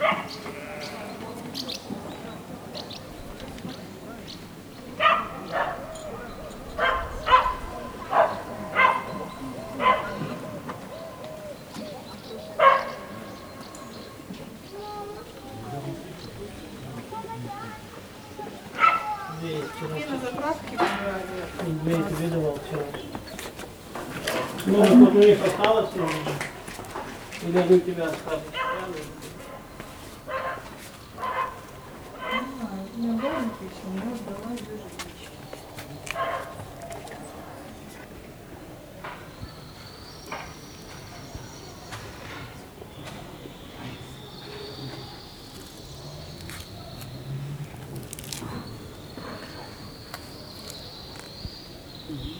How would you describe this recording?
The Assumption Monastery of the Caves is carved into a cliff. The date of it's foundation is disputed, although local monks assert that it originated as early as the 8th century but was abandoned when Byzantium lost its hold on the region. The current monastic establishment dates back to the 15th century. In 1921 the monastery was closed by the Soviet government. After the dissolution of the Soviet Union and Ukrainian independence the monastery was reopened to the public in 1993. The self-empowered garde of Kosaks protects the site with whips and sabre against wrong behaving people and the local Tatars, whom they consider as a threat. From the steps up into the entrance-hall-chapel, with a zoom recorder I catch the clouds of shouting swallows, monks and their herds of goats, sheep and cows in the valley, a huge modern drill carving deeper into the mountains on the other side and behind me tourists and helpers of the monastry pass.